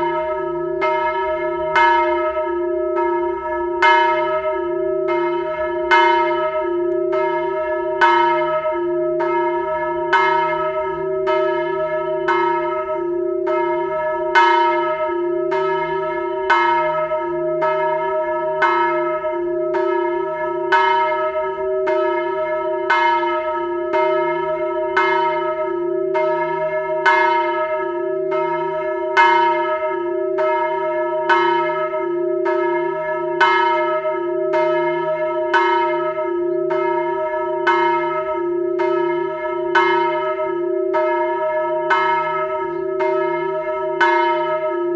Sépeaux, France - Angelus
7PM church bells calls